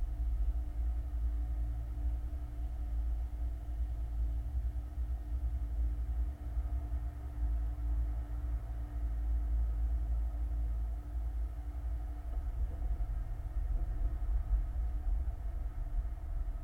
Utena, Lithuania, abandoned hangar ventilation
some abandones hangar. contact mics on metallic ventilation window